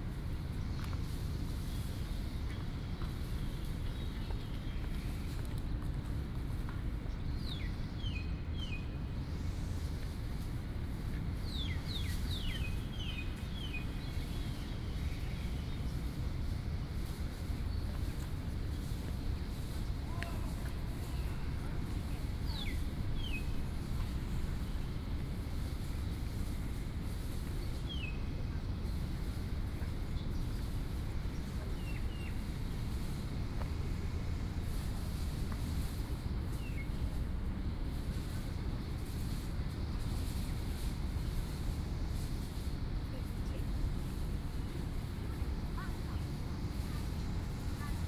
Drunken Moon Lake, National Taiwan University - Sitting on the lake
At the university, Sitting on the lake, Footsteps, Bird sounds
Taipei City, Taiwan, 4 March 2016, ~16:00